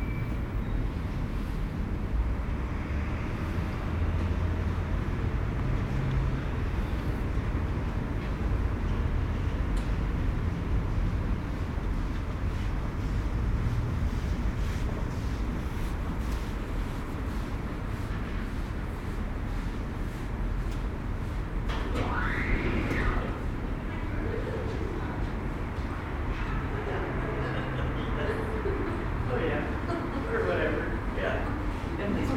Calgary +15 Fourth & Fourth bridge
sound of the bridge on the +15 walkway Calgary
Alberta, Canada